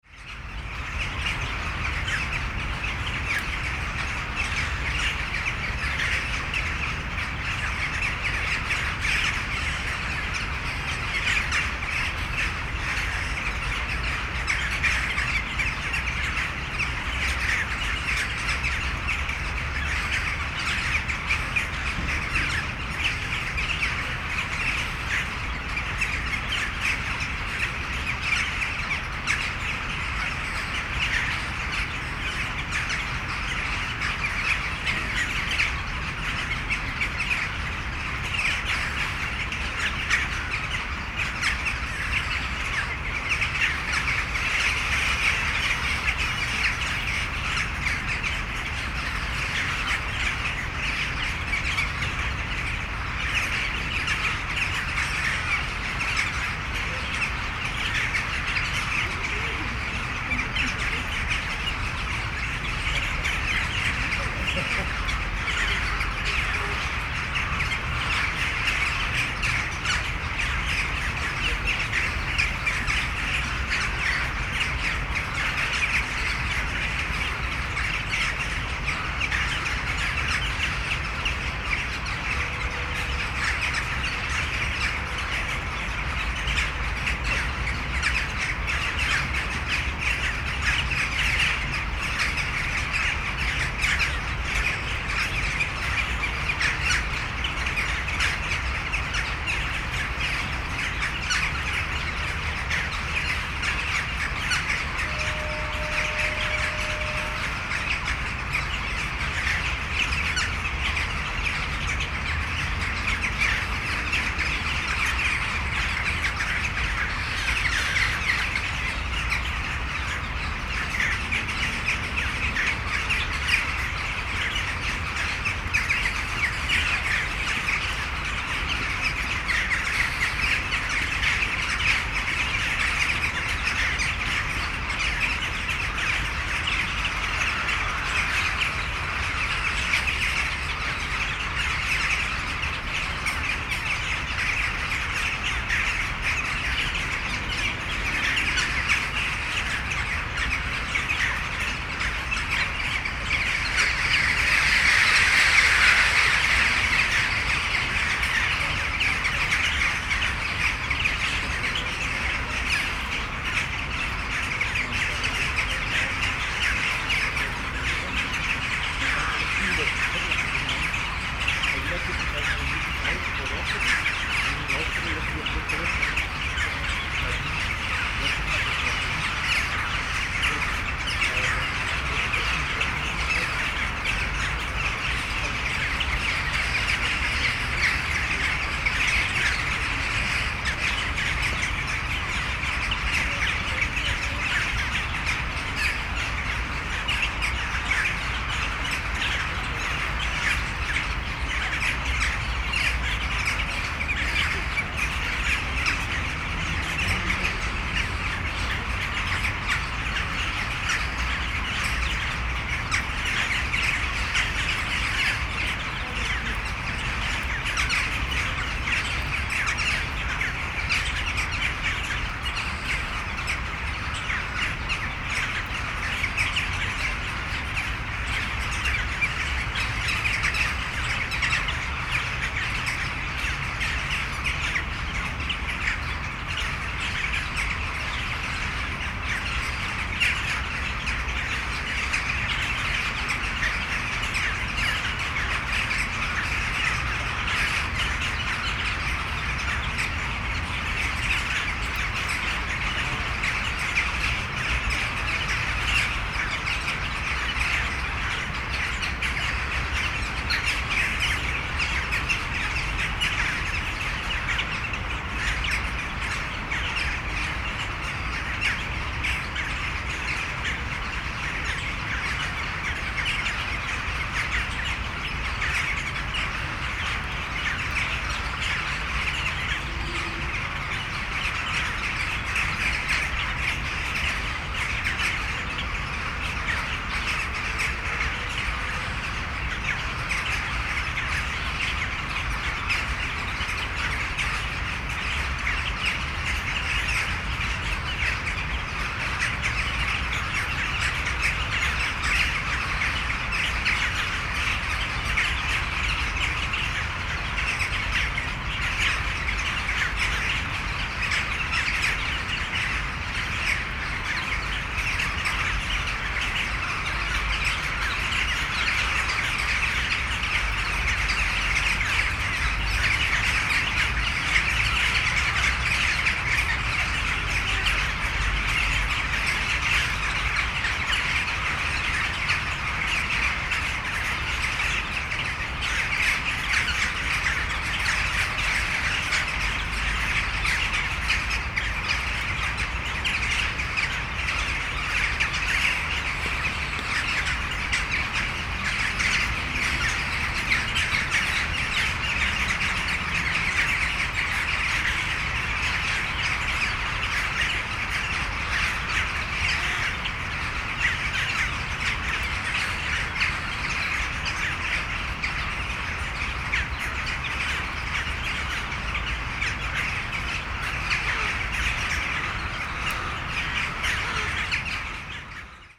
Ogród Branickich, Jana Kilińskiego, Białystok, Polsko - flock of jackdaws
A huge flock of jackdaws sitting in the trees in the garden of Branicki castle in Bialystok.